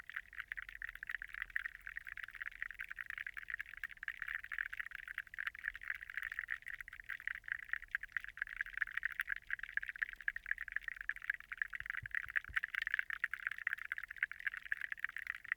Meramec River, Sullivan, Missouri, USA - Meramec State Park Hydrophone
Hydrophone recording of Meramec River. There was a school of minnows surrounding the hydrophone.